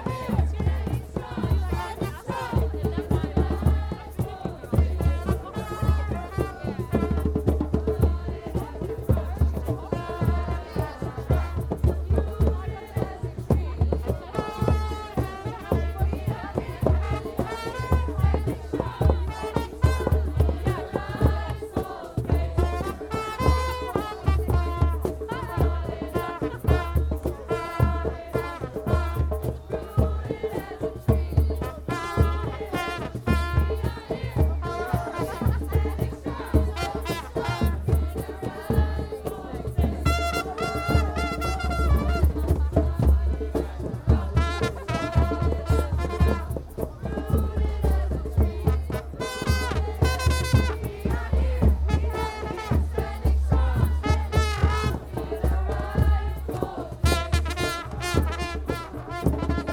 Amsterdam, Netherlands - Extinction Rebellion 2
some more chanting!
Noord-Holland, Nederland, 7 October 2019